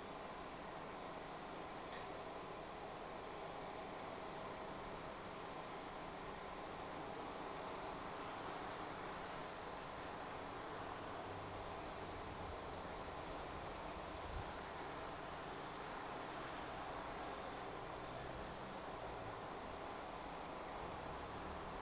Gargarish، Tripoli، Libya - Street Ambient from Balcony
Zoom F8N, DPA mics, 92Khz